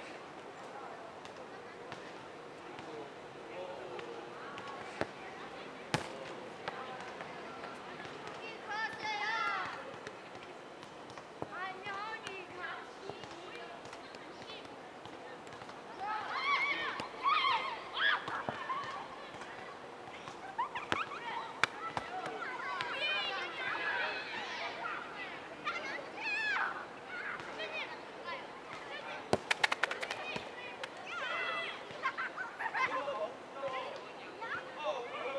대한민국 서울특별시 서초동 서울교육대학교 - SNUE playground, kids playing soccer
SNUE playground, kids playing soccer, nice reflection
서울교육대학교, 아이들 축구
서초구, 서울, 대한민국, 4 September 2019, ~15:00